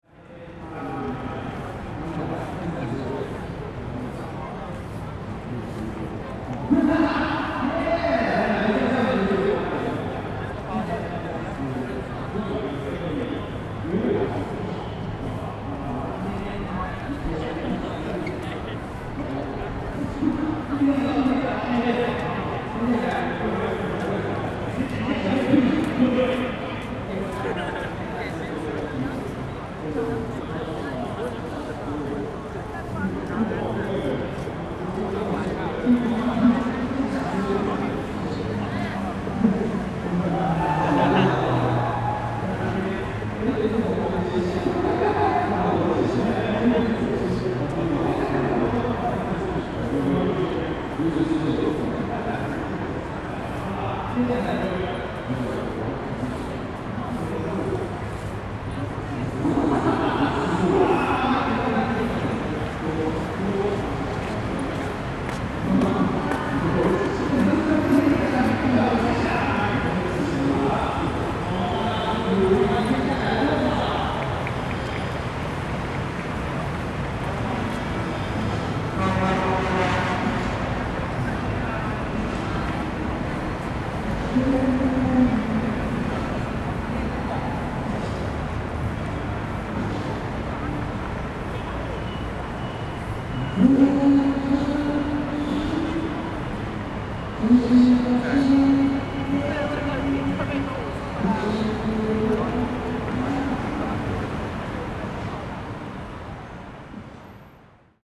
in front of the Kaohsiung Arena Square, Campaign sound, Sony ECM-MS907, Sony Hi-MD MZ-RH1
高雄市 (Kaohsiung City), 中華民國, 25 February